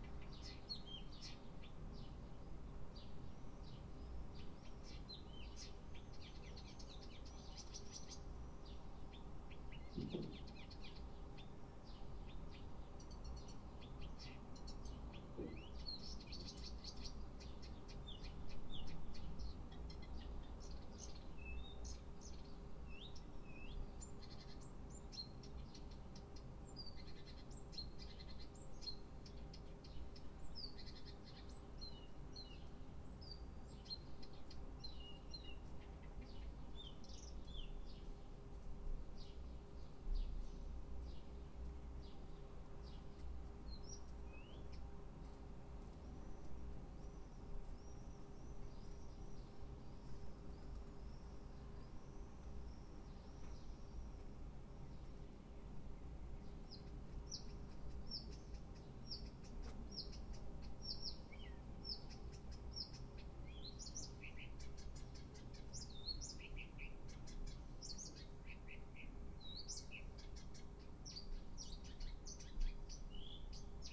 Одесская ул., корпус, Москва, Россия - Birds singing in the morning
Birds are singing in the street. There is a construction site not far from the place, and the sound signals made by trucks can be heard.
Центральный федеральный округ, Россия